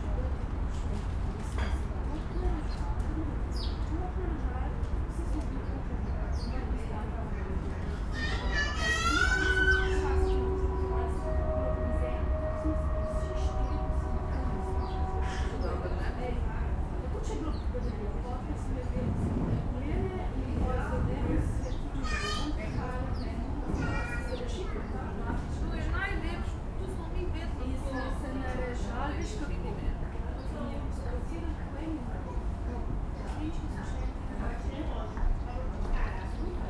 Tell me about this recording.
cafe at Tivolski ribnik (Tivoli pond), ambience and city sounds, horns of trains, which can be heard all over town. (Sony PCM D50, DPA4060)